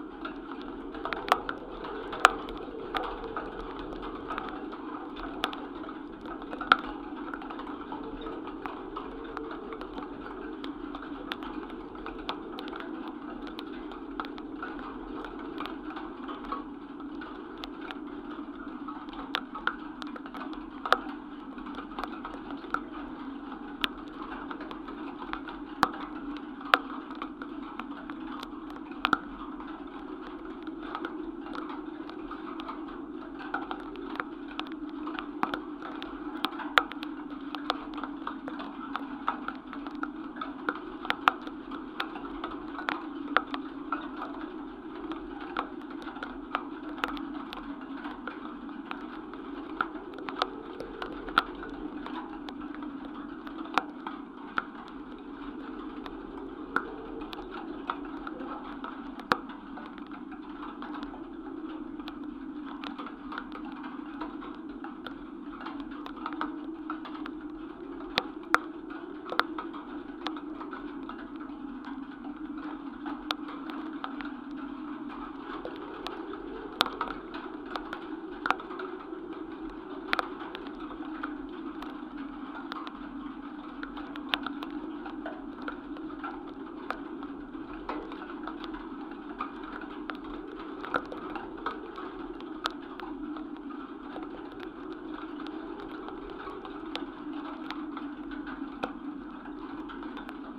Meyrueis, France - Wind in a fence
Here in this desertic land, there's an enclosure, where farmers put dead bodies as sheeps or cows. As this, vultures can eat. I began to record the fence with contact microphones, but a strong snow began to fall, with an atrocious cold wind. The sound ? It just makes something weird I didn't want to erase, as it was so strange to be with dead bodies in a so desertic and hostile place...